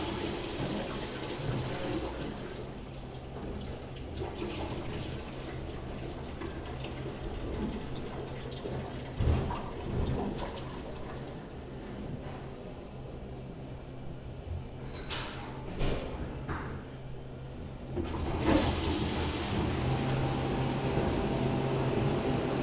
neoscenes: Flinders Street Station loo
Melbourne VIC, Australia